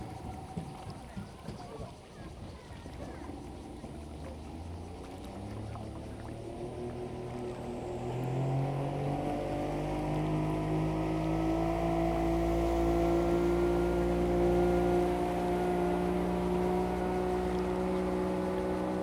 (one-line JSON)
{"title": "鯉魚潭, Shoufeng Township - In the lake shore", "date": "2014-08-28 10:50:00", "description": "Very Hot weather, Yacht, Lake voice, Tourists\nZoom H2n MS+XY", "latitude": "23.93", "longitude": "121.51", "altitude": "140", "timezone": "Asia/Taipei"}